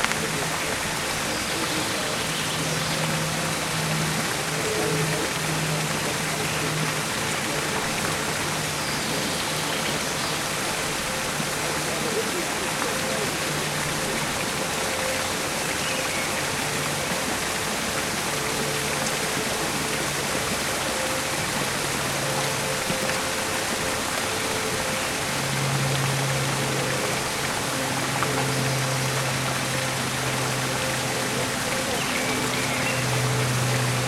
Eremitage, Bayreuth, Deutschland - grosses Basin
grosses Basin, all fountains working